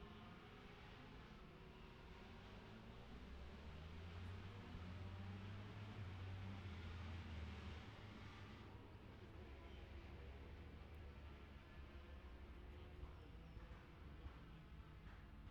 Ultra lightweight practice ... 125 ... 250 ... 400 ... two strokes / four strokes ... Bob Smith Spring Cup ... Olivers Mount ... Scarborough ... open lavalier mics clipped to sandwich box ...
Scarborough, UK, 22 April, 10:49